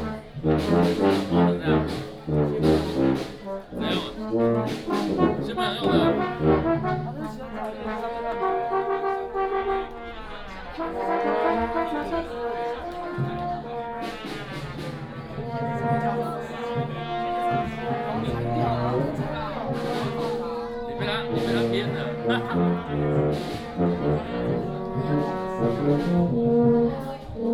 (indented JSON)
{
  "title": "he Affiliated Senior High School of National Chi-Nan University, Taiwan - Many students practice",
  "date": "2016-03-27 15:36:00",
  "description": "orchestra, Many students practice",
  "latitude": "23.97",
  "longitude": "120.95",
  "altitude": "437",
  "timezone": "Asia/Taipei"
}